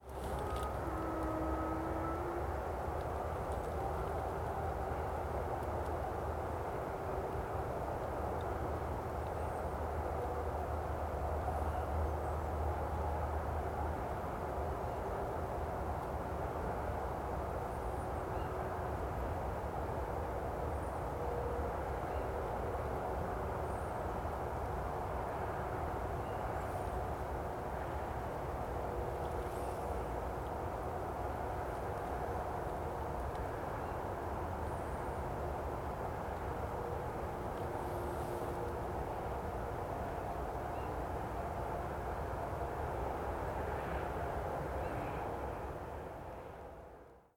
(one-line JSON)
{"title": "Fano PU, Italia - tardo pomeriggio", "date": "2013-02-28 19:00:00", "description": "ho parcheggiato a lato della strada. ho messo il registratore settato su surround appoggiato sul tetto della macchina", "latitude": "43.84", "longitude": "12.99", "altitude": "63", "timezone": "Europe/Rome"}